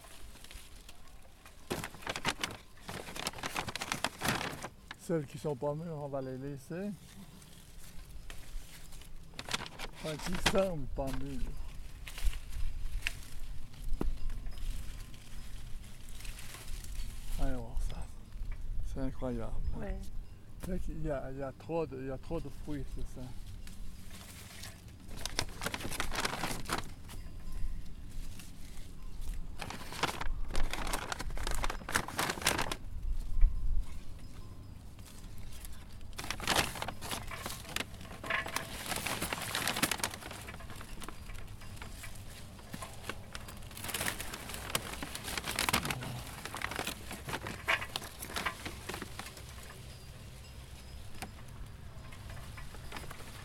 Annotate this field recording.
Espace culturel Assens, Apfelernte an sehr sehr altem Baum